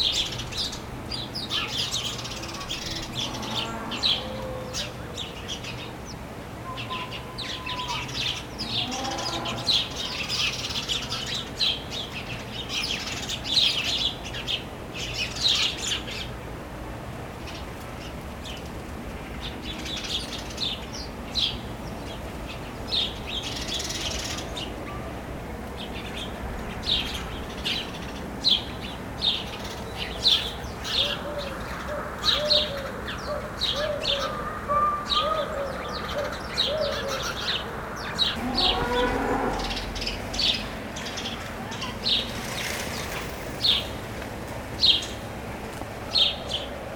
Chastre, Belgique - Sparrows
Sparrows fights in the very quiet village of Villeroux, and the village gentle ambiance a summer evening.
Chastre, Belgium, 14 August 2016